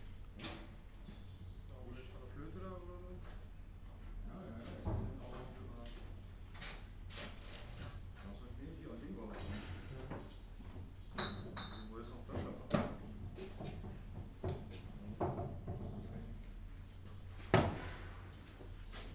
Berlin Bürknerstr., backyard window - scaffolders working in front of my window
radio aporee backyard window, 3.7.2008, 9:00, scaffolders working in front of my window (open).
Berlin, Germany, 3 July 2008